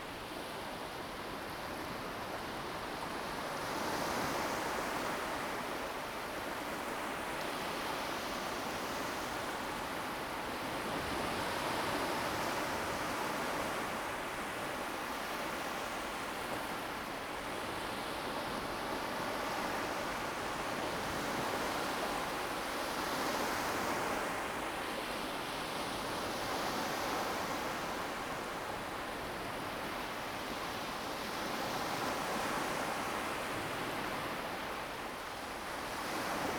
濱海林蔭大道, 新屋區, Taoyuan City - High tide time
High tide time, Late night beach, Sound of the waves, Zoom H2n MS+XY